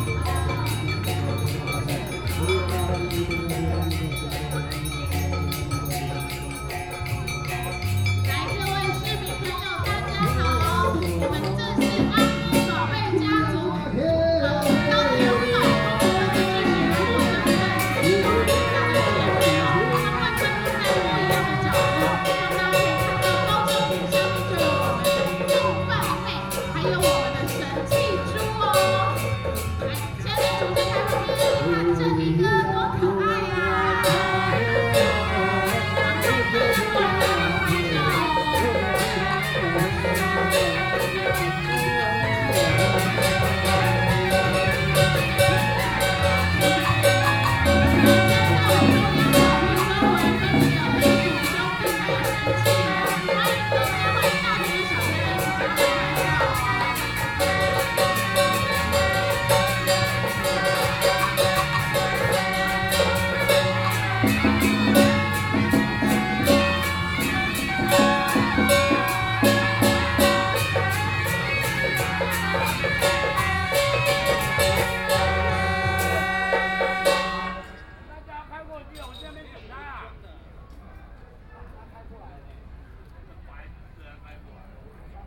Taipei City Hakka Cultural Park - Hakka Culture Traditional Ceremony
Hakka Culture Traditional Ceremony, Binaural recordings, Sony PCM D50 + Soundman OKM II